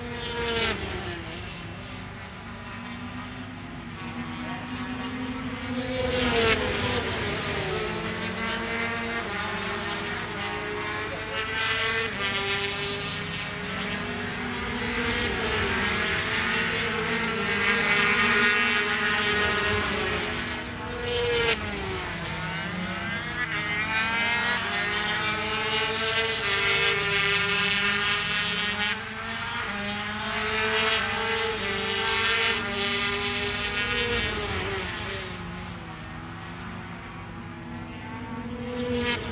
Derby, UK - british motorcycle grand prix 2007 ... 125 practice ...
british motorcycle grand prix 2007 ... 125 practice ... one point stereo mic to minidisk ...
England, United Kingdom, 23 June